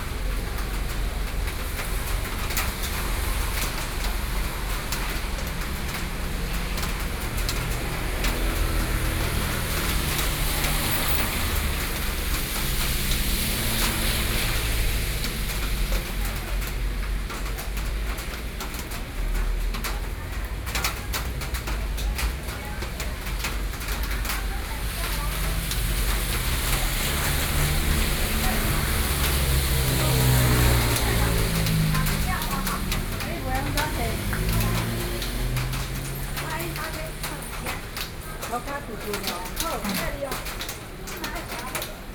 Xinxing Rd., Beitou Dist., Taipei City - Rainy Day
Beitou District, Taipei City, Taiwan, 30 November 2012